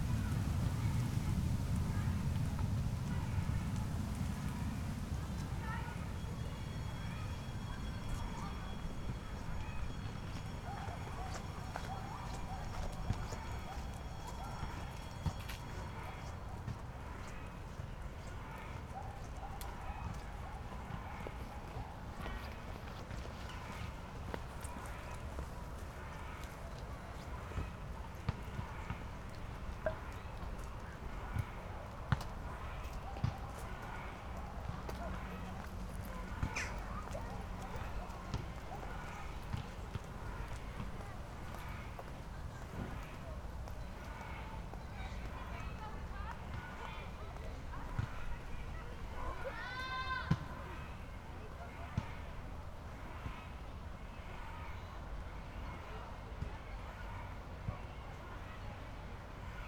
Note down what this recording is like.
a mild september afternoon. ambience in the heart of the housing estate, jet, kinds playing during classes break.